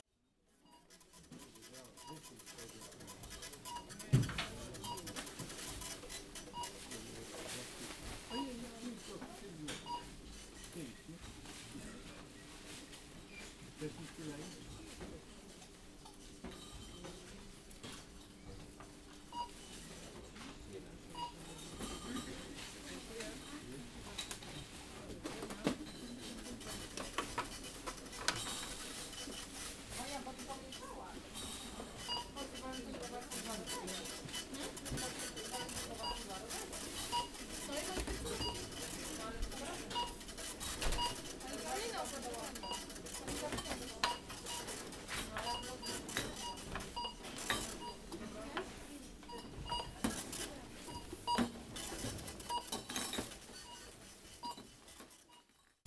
{"title": "Poznan, Piatkowo, Lidl store", "description": "standing in line to cash desk, they were very busy that day", "latitude": "52.46", "longitude": "16.91", "altitude": "97", "timezone": "Europe/Berlin"}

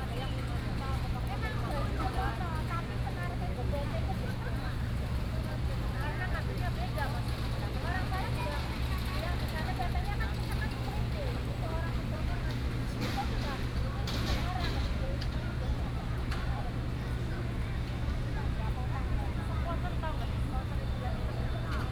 {"title": "成功國宅, Taipei City - In the Plaza Community", "date": "2015-09-15 18:07:00", "description": "In the Plaza Community", "latitude": "25.03", "longitude": "121.55", "altitude": "23", "timezone": "Asia/Taipei"}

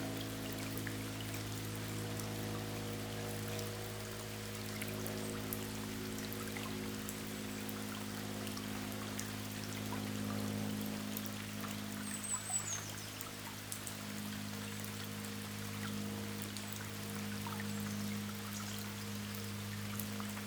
{"title": "Saint-Martin-de-Nigelles, France - Rain and Drouette river", "date": "2017-08-09 16:20:00", "description": "During a long and sad rain, I'm a refugee inside an old wash-house. The Drouette river is flowing quietly. White Wagtail are shouting, quickly a Common Kingfisher is flying.", "latitude": "48.62", "longitude": "1.62", "altitude": "107", "timezone": "Europe/Paris"}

